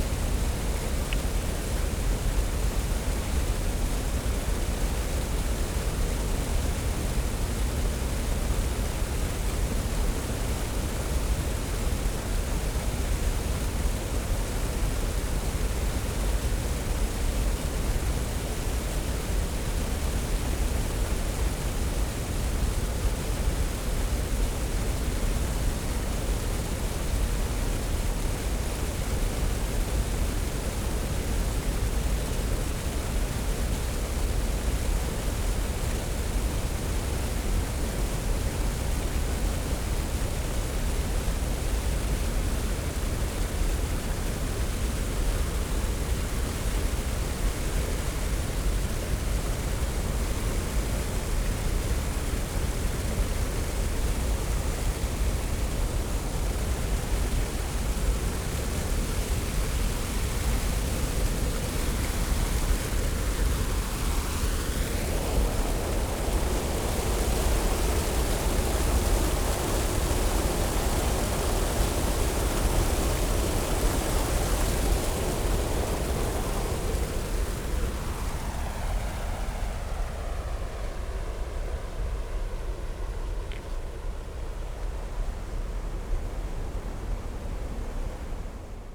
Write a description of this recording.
artificial waterfall at a weir of river Panke creates a deep drone, (SD702, DPA4060)